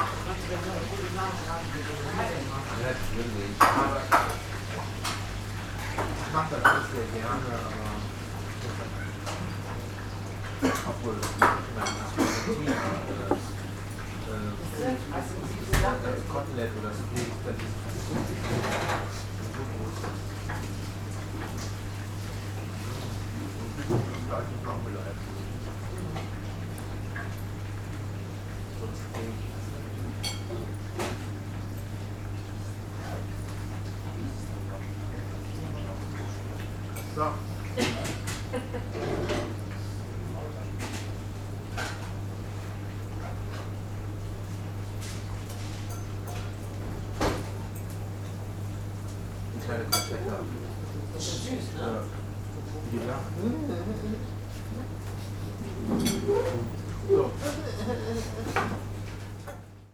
köln, palmstr. - vietnamese restaurant
small vietnamese restaurant ambience, ventilation hum